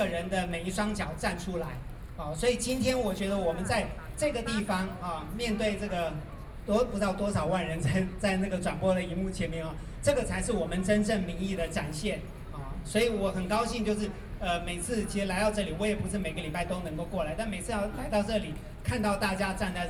Chiang Kai-shek Memorial Hall, Taipei - Speech
Taiwan's well-known theater director, Speech against nuclear power, Zoom H4n + Soundman OKM II